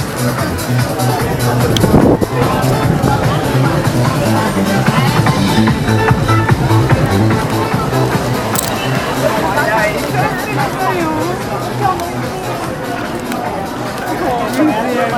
Flexeiras - Trairi - Ceará, Brazil - Nas ruas noturnas de Flexeiras
Nas ruas de Flexeiras